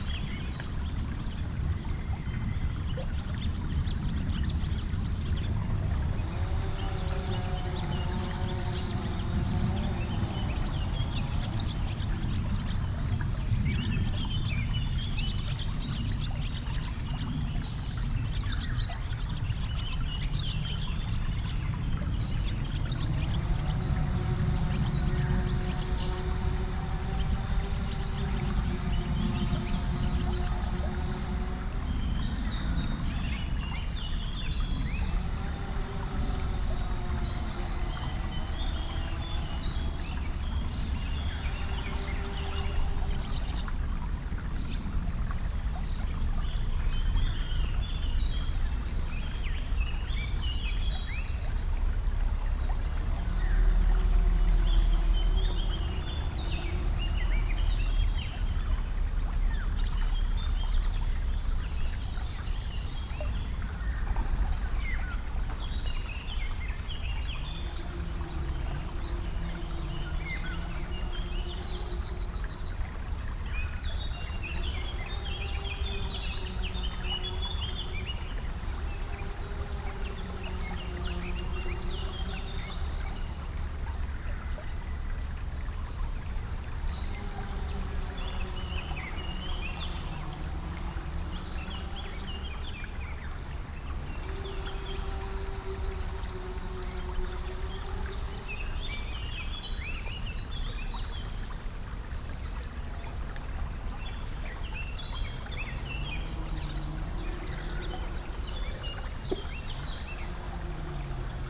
river spring, birds and wood sawing